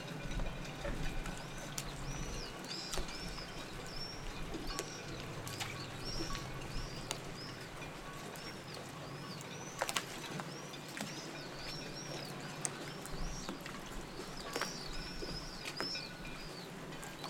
Leopld ha-Sheni St, Acre, Israel - Port of Acre
Sea, waves, port, boats, birds
3 May 2018, 09:00